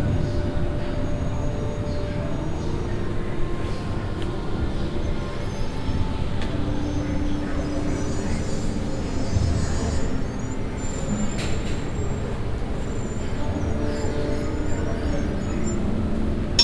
karlsruhe, zkm, inside the media museum
inside the media exhibition imagining media @ zkm of the center for art and media technology in karlsruhe - sounds of different mostly interactive media installations
soundmap d - topographic field recordings and social ambiences